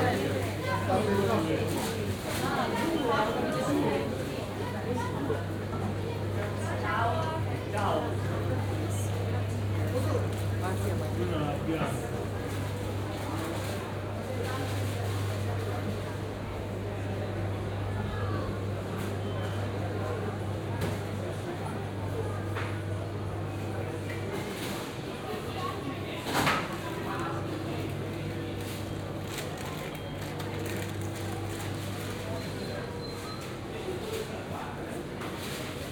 Rome, Marceto di Testacio - market at closing time
walking around a market. most stalls already closed. just a few grocers offering their products.